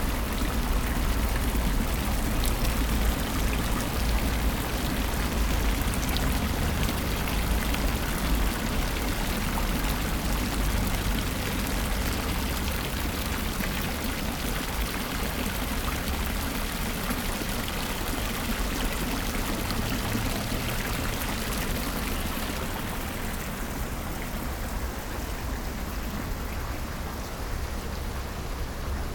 cologne, komoedienstr, roemische wasserspiele
verschiedene wassergeräusche und verkehrsrauschen, etwas windpoppen, am nachmittag
soundmap nrw
project: social ambiences/ listen to the people - in & outdoor nearfield recordings